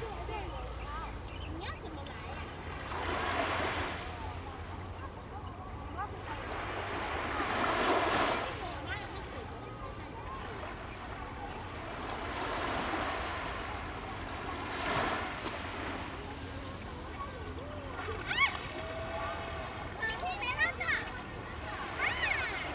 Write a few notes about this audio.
sound of the waves, At the beach, Zoom H2n MS+XY +Sptial Audio